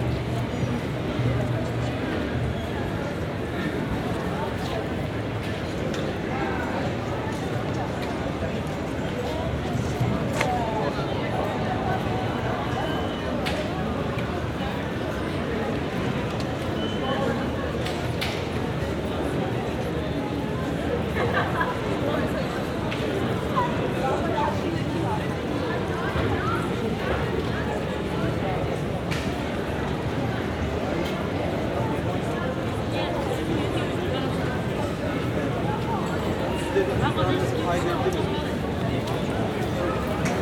Istanbul Soundscape, Sunday 16:40 Tunel
soundscape on Sunday at 16:40 Tunel, for New Maps of Time workshop